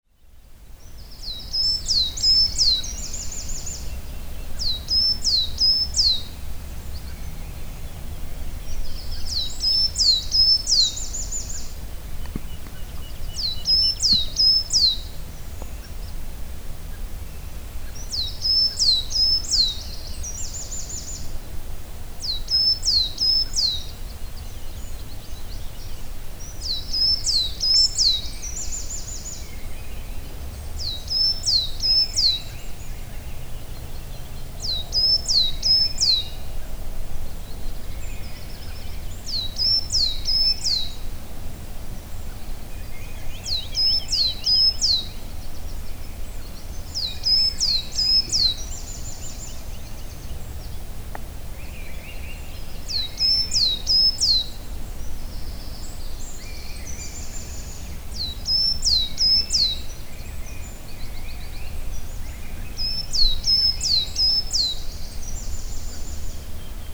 Quézac, France - Peaceful morning
After an horrible night, attacked by a dog, here is a peaceful morning in the forest, sun is awakening.